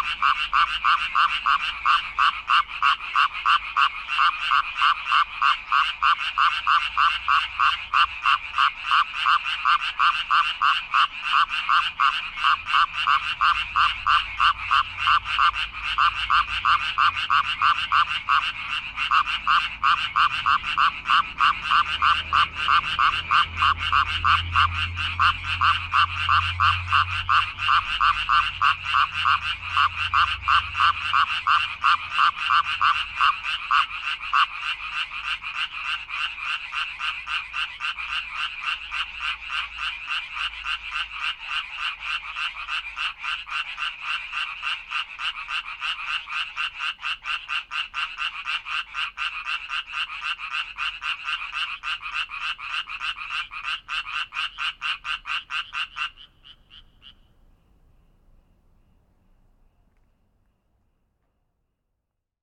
Beside the train-line in Teogye-dong - At night in Teogye-dong
frogs in a drain rockin' Chuncheon at the midnight hour